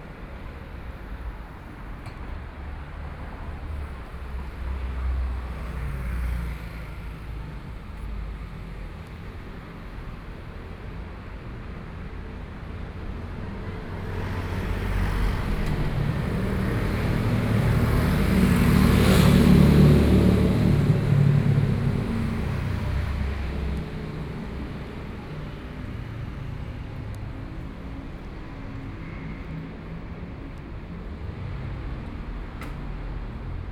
Sec., Neihu Rd., Neihu Dist. - walking on the Road
walking on the Road, Traffic Sound
Binaural recordings